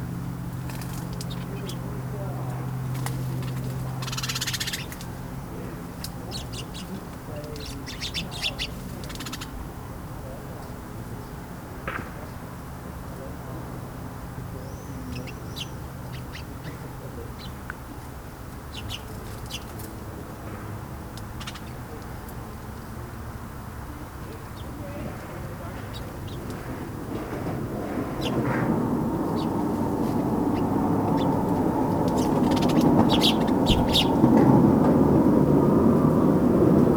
Sparrows in their bush (bed time). Workers in village street. Distant traffic noise. Passing plane. We can also hear swift calls.
Moineaux dans leur buisson (à l’heure du coucher). Voix d'ouvriers dans la rue du village. Bruit de trafic lointain. Passage d’un avion. On peut aussi entendre des martinets.
Roskilde, Denmark, 1 August 2018